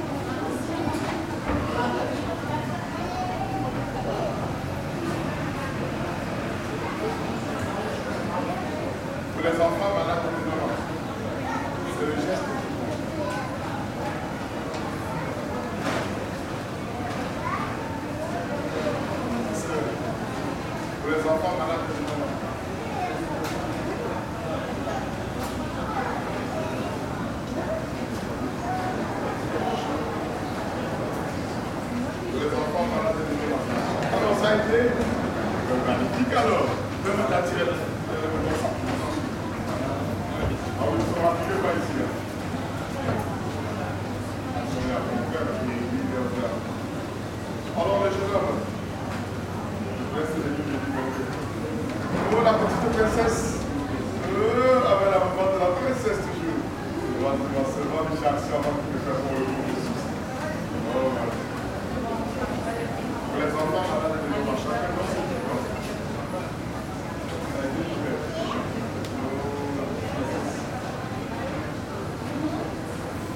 Chau. d'Ixelles, Ixelles, Belgique - Underground gallery ambience
A man is collecting money to prevent Noma disease.
Tech Note : Sony PCM-M10 internal microphones.
Région de Bruxelles-Capitale - Brussels Hoofdstedelijk Gewest, België / Belgique / Belgien